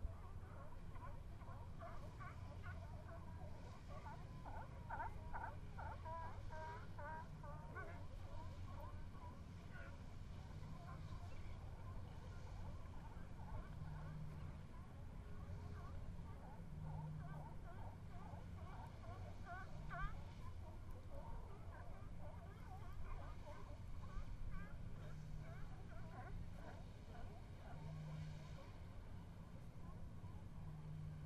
Sonoma, CA, USA - Bodega Bay, Ca
Bouy, California sea lions in a distance and boats entering mouth of Bodega Bay ...Sunday trip with Bara K.